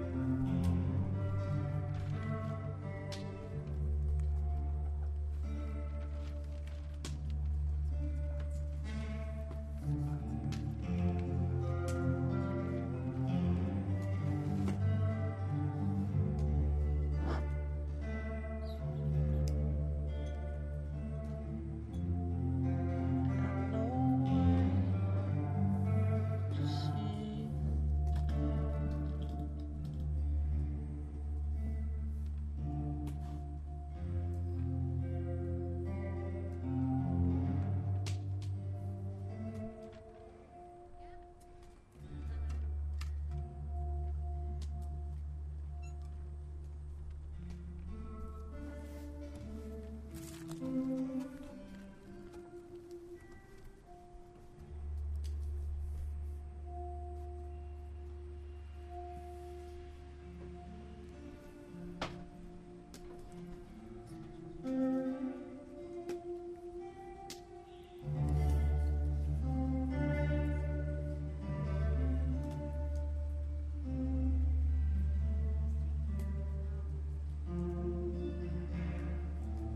Camp Exodus - Balz is playing the tapes at the Camp Exodus
camp exodus is a performative architecture, a temporary laboratory, an informative space station in the format of a garden plot.
orientated on the modular architectures and "flying buildings", the camp exodus compasses five stations in which information can be gathered, researched, reflected on and reproduced in an individual way. the camp archive thus serves as a source for utopian ideas, alternative living concepts, visions and dreams.
Balz Isler (Tapemusician) was invited to experiment with Gordon Müllenbach (Writer).
Berlin, Germany, 15 August, 15:15